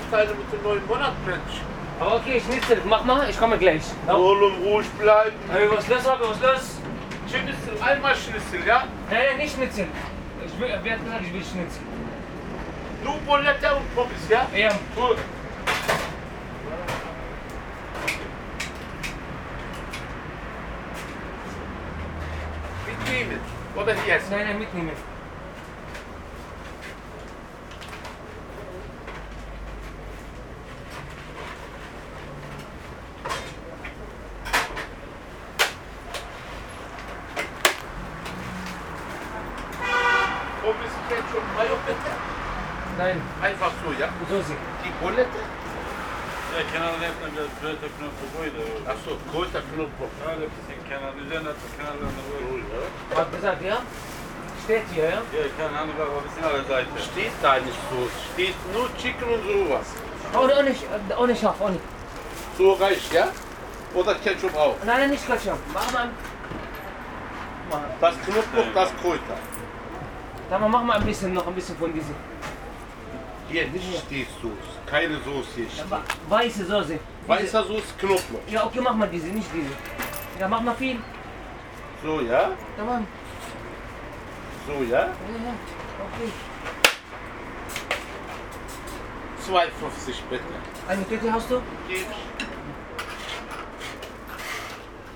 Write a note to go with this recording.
strange conversation between staff member and guest, the city, the country & me: november 9, 2012